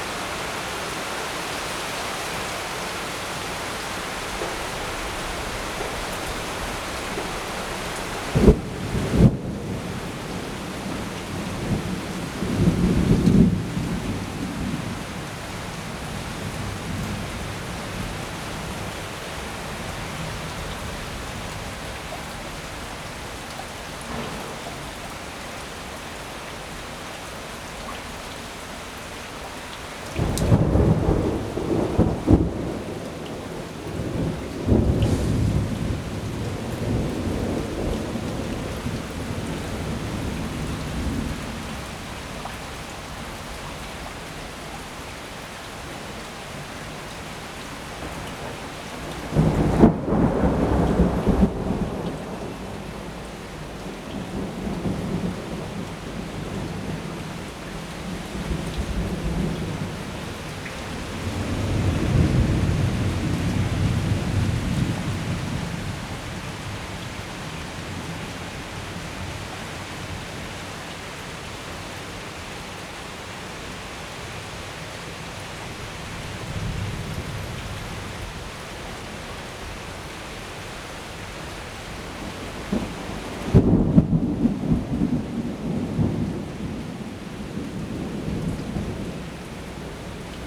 Yonghe, New Taipei City - Heavy thundery showers
Heavy thundery showers, Sony ECM-MS907, Sony Hi-MD MZ-RH1